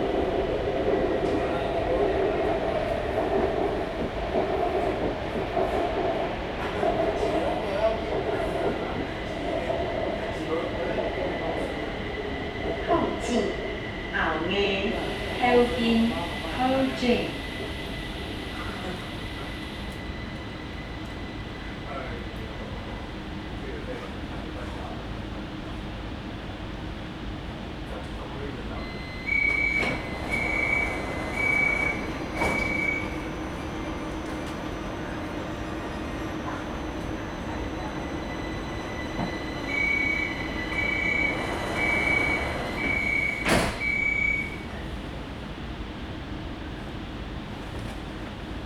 from Houjing Station to Zuoying Station, Sony ECM-MS907, Sony Hi-MD MZ-RH1